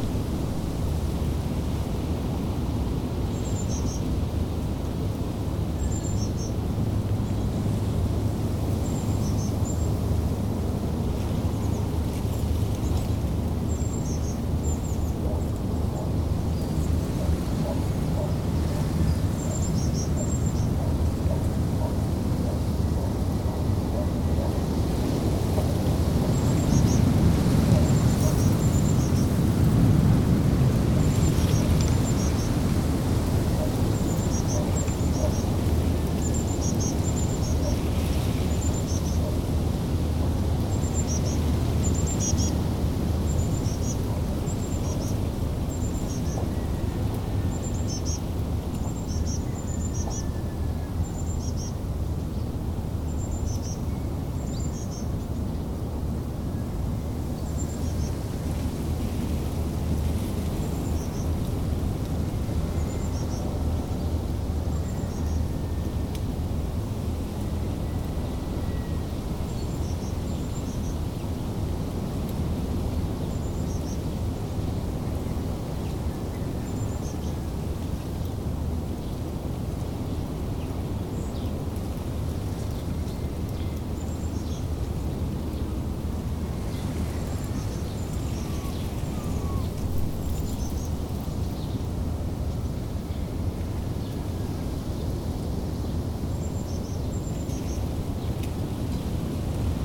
Three recordings taken at Plas Bodfa. The first two are recorded in the front garden and are of birds and the wind in the bushes, the sea ( and possibly traffic ) in the backround; the third is recorded in the kitchen garden.
Tascam DR 05X, edited in Audacity.
Cymru / Wales, United Kingdom, 8 March, 12:00